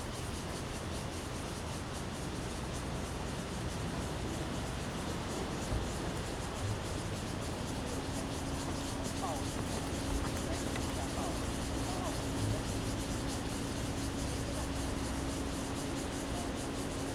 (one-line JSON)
{"title": "金樽遊憩區, Donghe Township - Passenger sitting area", "date": "2014-09-06 12:25:00", "description": "Cicadas sound, Sound of the waves, Traffic Sound, Parking, Passenger sitting area, Very hot weather\nZoom H2n MS+ XY", "latitude": "22.95", "longitude": "121.28", "altitude": "58", "timezone": "Asia/Taipei"}